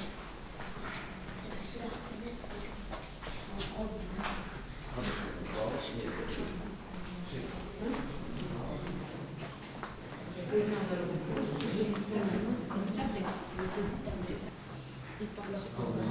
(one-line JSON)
{"title": "vaison la romaine, roman church, cloister", "date": "2011-08-28 11:31:00", "description": "Walking on the cloister of the historic church. The sound of footsteps and visitors talking.\ninternational village scapes - topographic field recordings and social ambiences", "latitude": "44.24", "longitude": "5.07", "altitude": "198", "timezone": "Europe/Paris"}